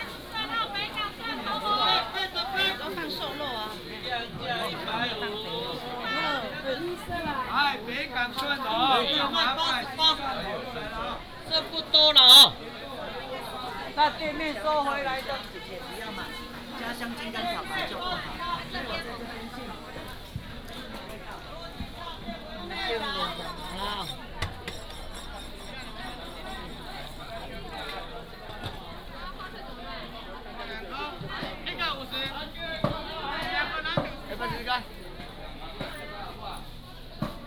Bo’ai St., Miaoli City - traditional market
Walking in the traditional market, Market selling sound, sound of birds
16 February 2017, ~09:00